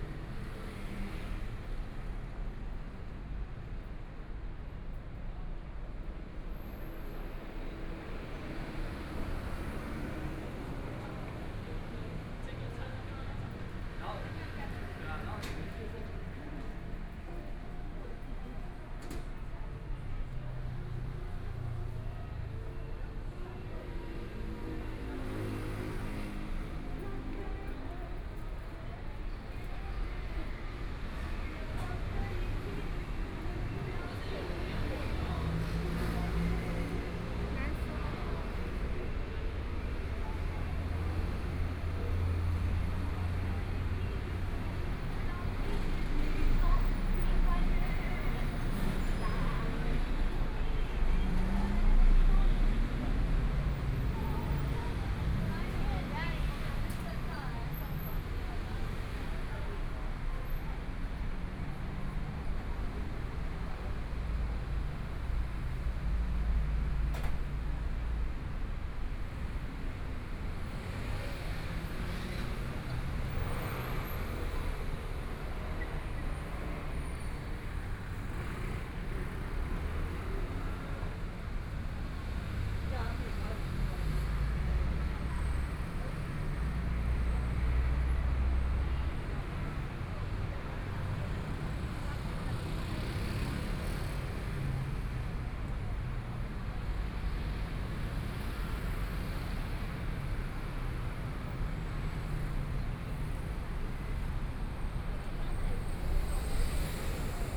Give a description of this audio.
Walking on the road （ZhongShan N.Rd.）from Jinzhou St to Nanjing E. Rd., Traffic Sound, Binaural recordings, Zoom H4n + Soundman OKM II